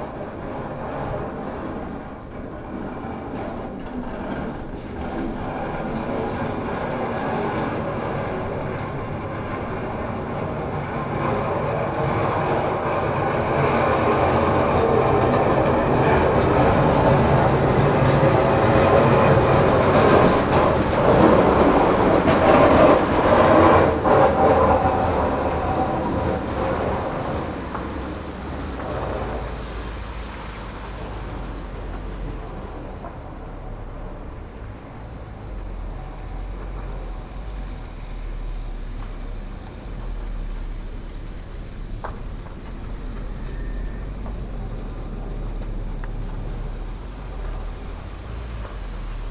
Ave, Ridgewood, NY, USA - After the snow storm
Street sounds after a snow storm.
Man shoveling the snow from the sidewalks.
Zoom h6